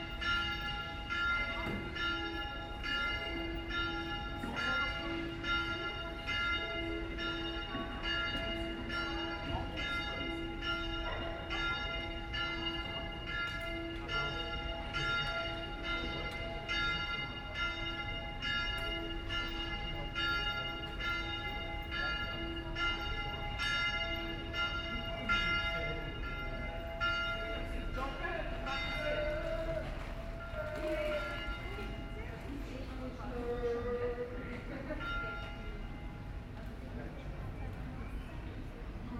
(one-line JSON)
{"title": "Aarau, Kirchplatz, noon, Schweiz - Kirchplatz mittags", "date": "2016-06-30 13:01:00", "description": "Bells and the atmosphere on the Kirchplatz of Aarau, one day before the Maienumzug, a yearly festivity that starts on the first friday of july, the evening before that day a big party is happening in the streets of Aarau.", "latitude": "47.39", "longitude": "8.04", "altitude": "381", "timezone": "Europe/Zurich"}